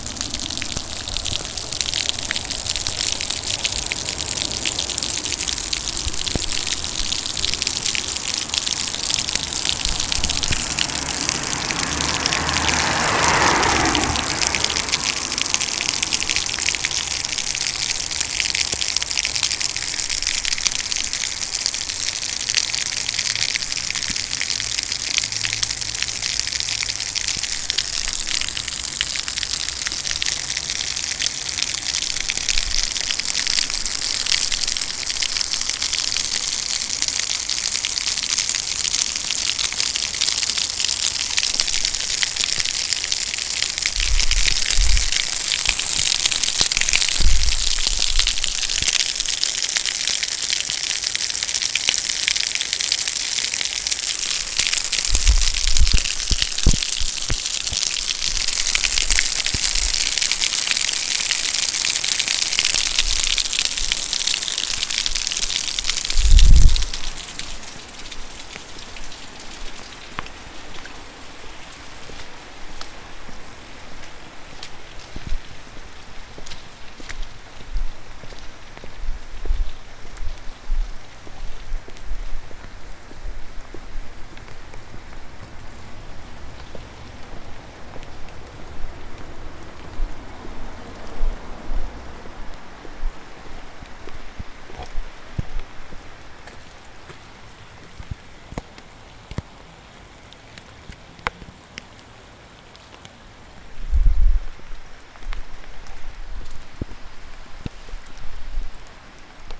{"title": "Quayside, Newcastle upon Tyne, UK - Quayside", "date": "2019-10-13 15:48:00", "description": "Walking Festival of Sound\n13 October 2019\nRain, heavy water dripping on unit number 26 on Mariners Wharf.", "latitude": "54.97", "longitude": "-1.59", "altitude": "11", "timezone": "Europe/London"}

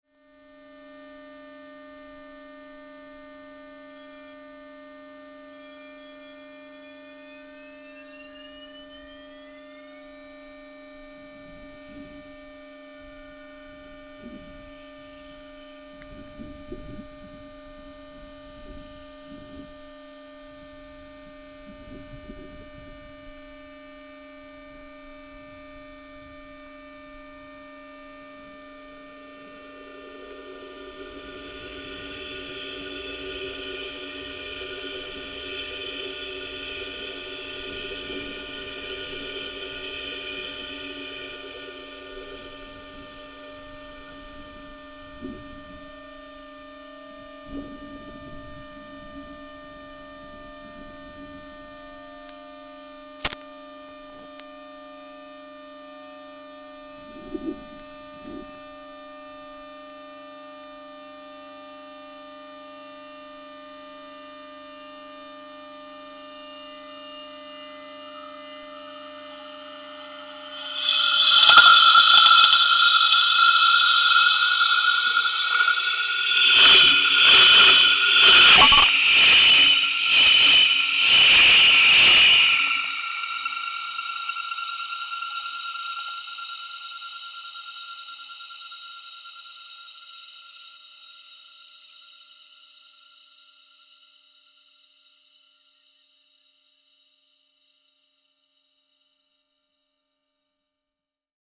{"title": "dolni pocernice, rails", "date": "2008-09-07 17:14:00", "description": "Recorded with a contact microphone this is sound inside a rail before and while train wheels pass over it. The first train is quieter because it is on a paralell track, the second is as close as the mic can get without being totally crushed.", "latitude": "50.09", "longitude": "14.58", "altitude": "229", "timezone": "Europe/Prague"}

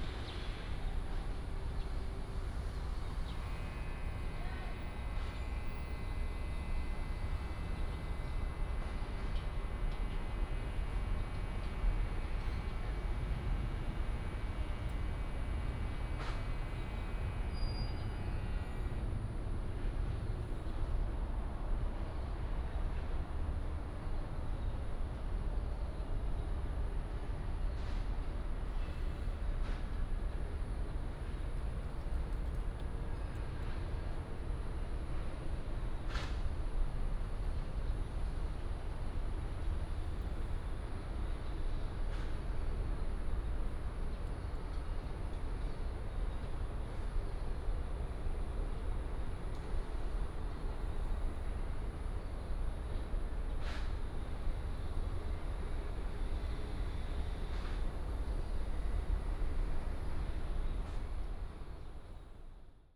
{"title": "Ln., Sec., Guangfu Rd., East Dist., Hsinchu City - Old community", "date": "2017-09-12 10:06:00", "description": "Old community, traffic sound, Construction sound, birds sound, Binaural recordings, Sony PCM D100+ Soundman OKM II", "latitude": "24.79", "longitude": "121.00", "altitude": "60", "timezone": "Asia/Taipei"}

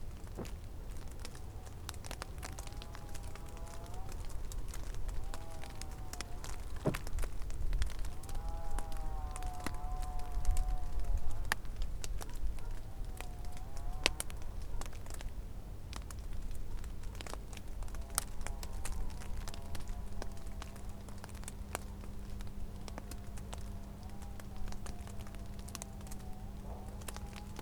{"title": "Lithuania, Sirutenai, melting white frost", "date": "2011-12-16 12:45:00", "description": "white frost melts and drips down from the bushes", "latitude": "55.55", "longitude": "25.61", "altitude": "150", "timezone": "Europe/Vilnius"}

